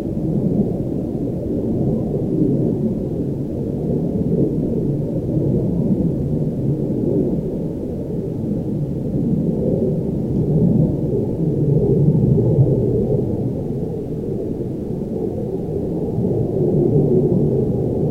Metabolic Studio Sonic Division Archives:
Airplanes flying over Owens Lake. First airplane you hear is flying very low to ground. Occasional traffic sound. Recorded with Zoom H4N